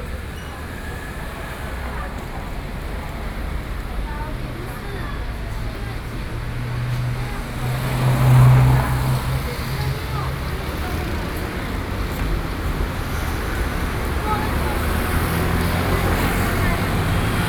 Yonghe District, New Taipei City - soundwalk
Environmental Noise, Busy traffic noise, Sony PCM D50 + Soundman OKM II